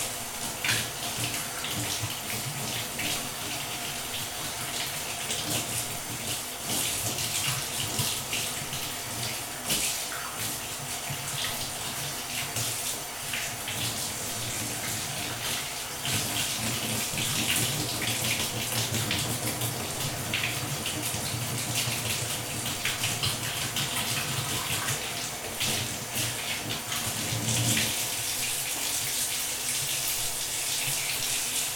University of Colorado Boulder, ATLS Building, Floor - Water Flow
A day in the building's kitchen.
CO, USA, 28 January 2013, ~17:00